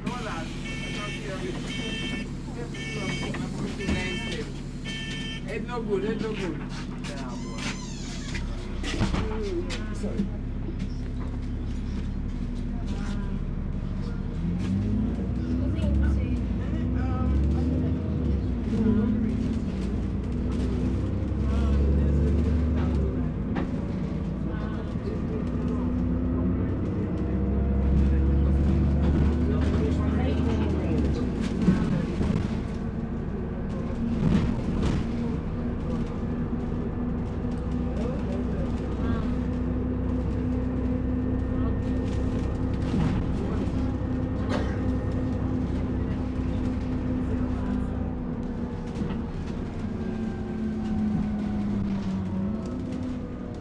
Brixton, London, UK - You Get to Listen to My Music with an American Flag on It
Recorded on the street and in a bus with a pair of DPA 4060s and a Marantz PMD661
10 February